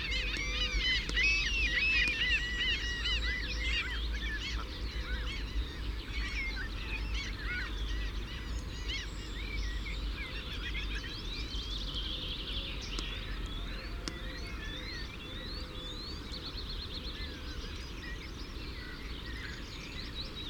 Isle of Mull, UK - early morning lochan ... with mew gulls ...
early morning lochan ... with mew gulls ... fixed parabolic to minidisk ... bird calls ... song from ... mew gulls ... curlew ... redshank ... oystercatcher ... common sandpiper ... greylag goose ... mallard ... skylark ... great tit ... chaffinch ... background noise ... some traffic ...
29 April 2009, 05:30